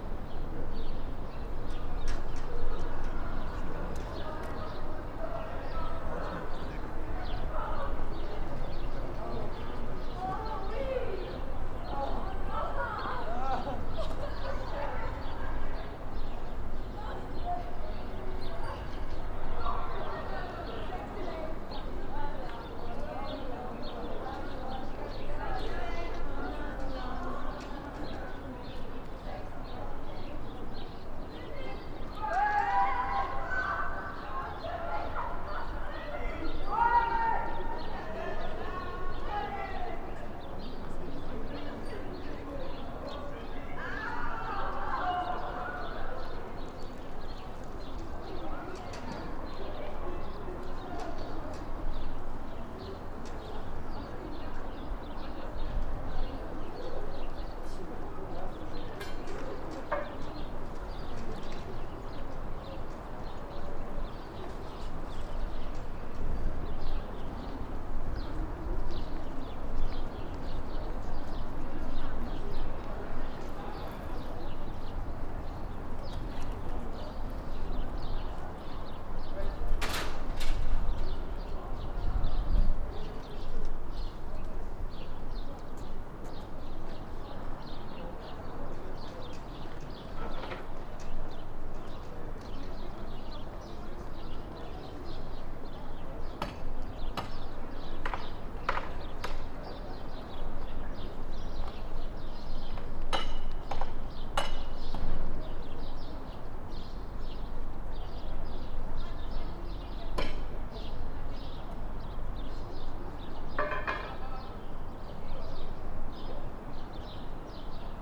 Sé e São Pedro, Evora, Portugal - Templo de Diana
Acoustic surroundings of Templo Diana, June 2006, AKG MS setup, Canford preamp, microtrack 2496